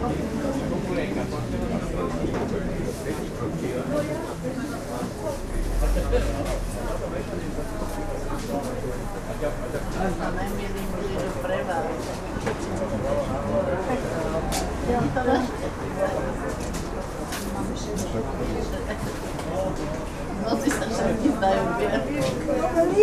bratislava, market at zilinska street - market atmosphere X
recorded with binaural microphones